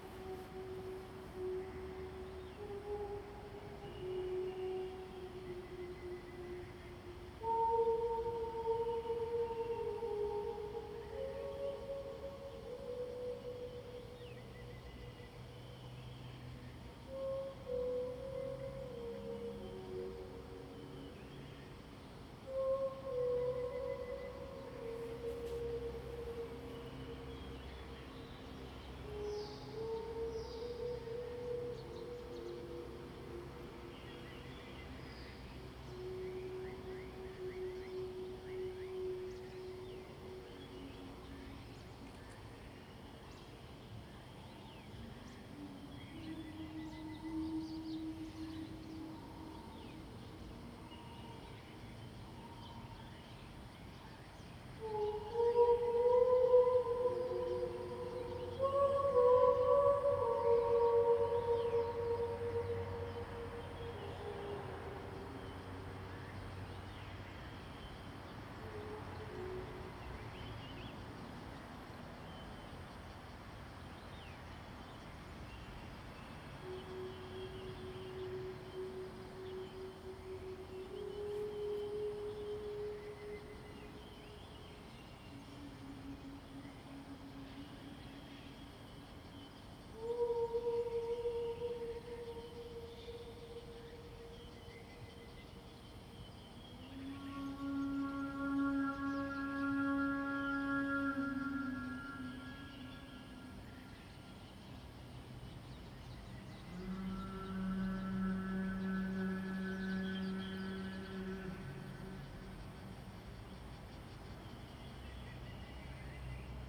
Vocal exercises test
Zoom H2n MS+XY